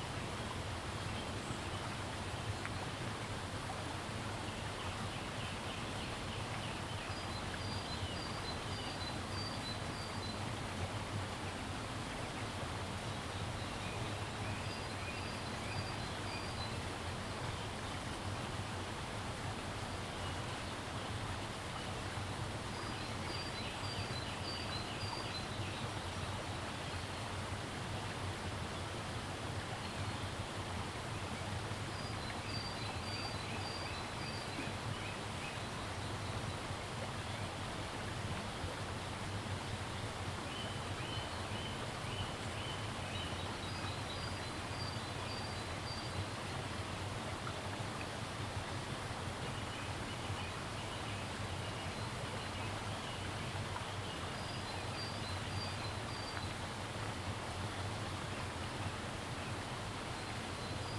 Grigno TN, Italia - Biotopo di Fontanazzo
suoni dall'area protetta dell'ansa del fiume
Grigno TN, Italy